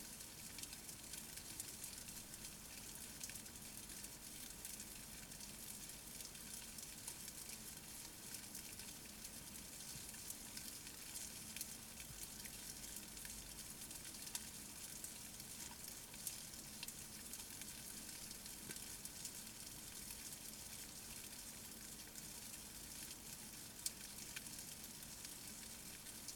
Our living room, Katesgrove, Reading, UK - silkworms in the living room
Another recording of the silkworms. They are growing very fast, and now they are bigger, their tiny feet sound louder. You can begin to hear in this recording why sericulturists refer to the restful, peaceful sound of raising silkworms, and also the comparisons of the sounds of the worms with the sound of rain. The main sound is produced by their claspers (feet) rasping against the thick mulberry leaves.